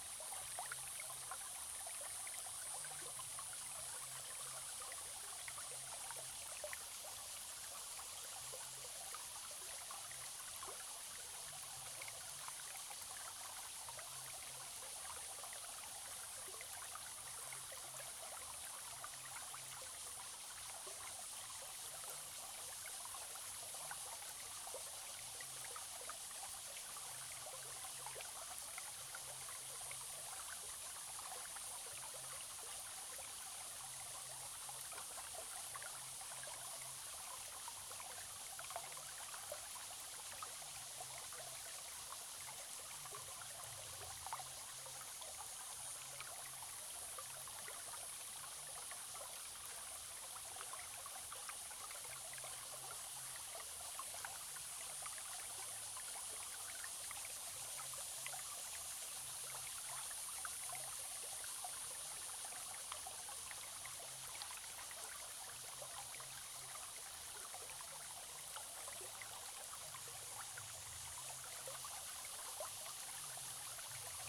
Zhonggua River, 成功里 Puli Township - Sound of water
Small streams, In the middle of a small stream, Flow sound
Zoom H2n MS+ XY+Spatial audio
Nantou County, Taiwan